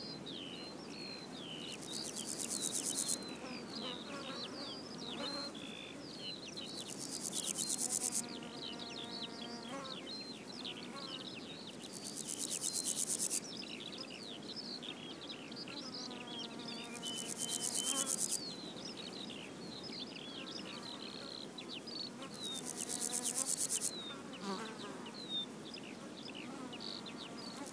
I set up the recorder behind a low stone wall, there was a strong wind blowing across the headland and the Skylarks were riding the breeze pouring out their beautiful songs above us. While on the ground there were a myriad of bees, flies and grasshoppers filling the gaps. Sony M10 using the built-in mics and homemade 'fluffy'.
England, United Kingdom, 25 June 2020, 10:40